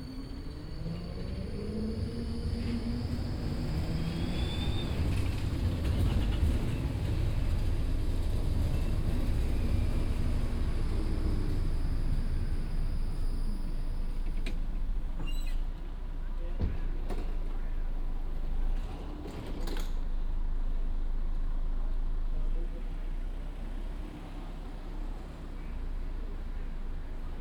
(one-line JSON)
{"title": "Linz, Aubrunnerweg, Tram terminus - tram terminus, ambience", "date": "2020-09-07 19:35:00", "description": "at the terminus of line 1, waiting, browsing around\n(Sony PCM D50, OKM2)", "latitude": "48.33", "longitude": "14.32", "altitude": "259", "timezone": "Europe/Vienna"}